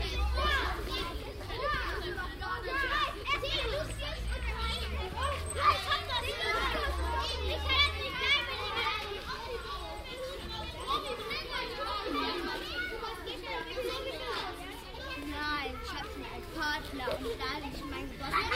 haan, grundschule, schulkinder
kids gathering in front of a school
project: : resonanzen - neanderland - social ambiences/ listen to the people - in & outdoor nearfield recordings